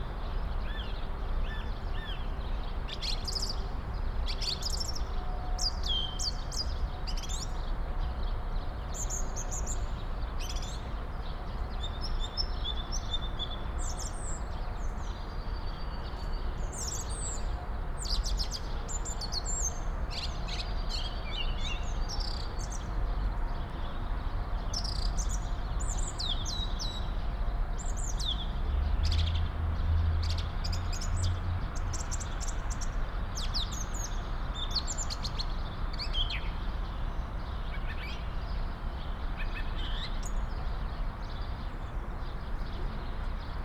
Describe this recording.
a Song thrush (german: Singdrossel), Turdus philomelos, in a tree, on a patch of grass between Plattenbau buildings, parking spaces and abandoned objects. Traffic drone from the nearby Autobahn. (Sony PCM D50, DPA4060)